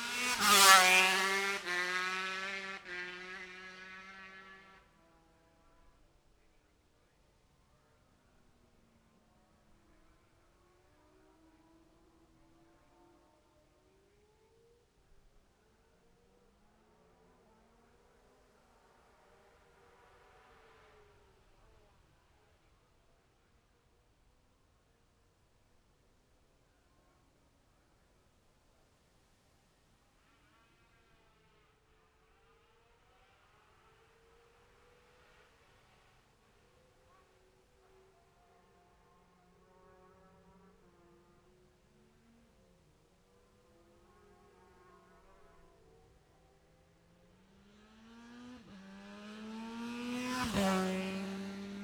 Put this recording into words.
Gold Cup 2020 ... sidecars practice ... Memorial Out ... dpa 4060s to Zoom H5 clipped to bag ...